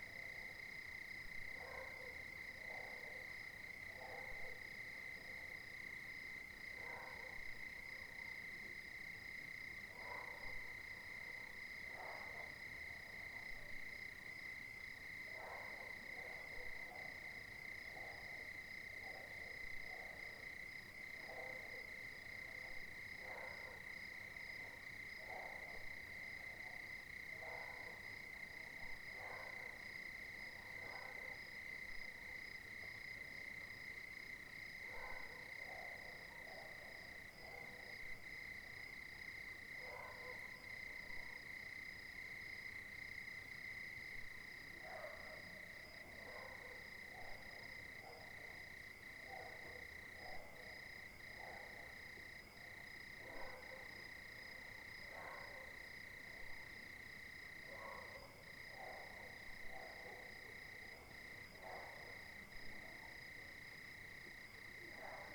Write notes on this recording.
J'ai profité pour cette prise de son, que le chien le plus proche se taise, laissant entendre les grillons et ceux qui sont plus loin, Le quartier est envahi de chiens, il est très rare d'avoir la paix la nuit. je ne dors plus la fenêtre ouverte: pour ne pas être réveillé être tout fermé ne suffit pas, si le chien le plus proche aboie, c'est 110dB qui tapent au mur de la maison et le béton n'isole pas, même avec doublage intérieur et double fenêtre il en reste assez pour réveiller: il faut être fermé, et en plus avec de la mousse dans les oreilles: avec la chaleur c'est étouffant. Pour cet enregistrement, les chiens proches ont jappé une demi heure avant, et calmés, laissent entendre ceux de la cité, qui eux ont commencé à midi samedi, jusqu'à la fin de nuit de dimanche.